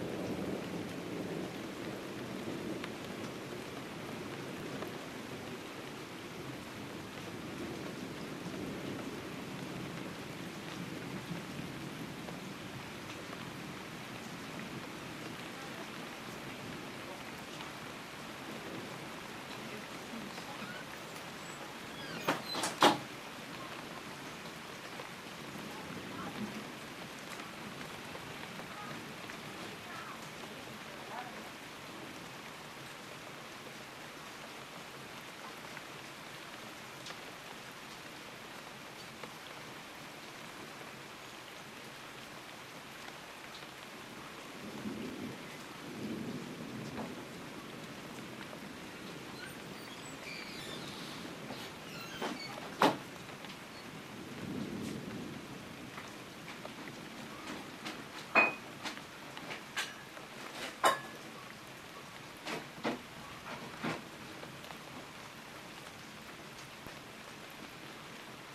Mortsel, Mortsel, België - garden
this recording is made in my garden by 2 microphones
those microphones record each day automatic at 6, 12, 18 & 24